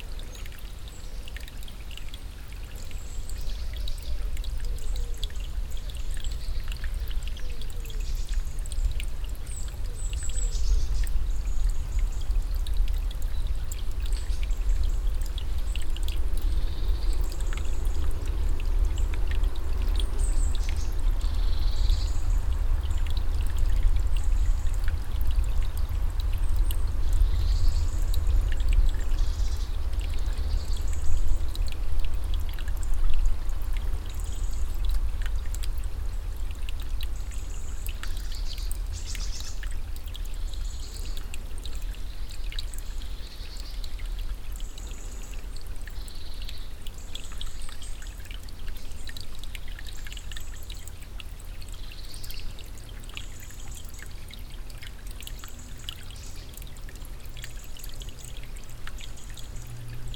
waldberg, forest, small stream
Inside the valley of a broadleaf forest. The sound of a small stream flowing slowly across stones. Around many birds tweeting vividly some wind movements in he trees and a screech owl howling nearby.
Luxembourg, September 2011